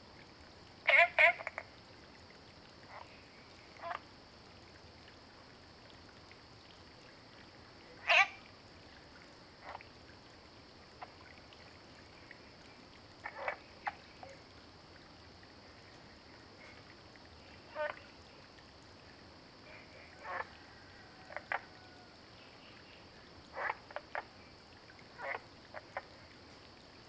Green House Hostel, Puli Township - Early morning
Frogs chirping, at the Hostel, Early morning
Zoom H2n MS+XY
Nantou County, Taiwan, 3 September 2015, ~05:00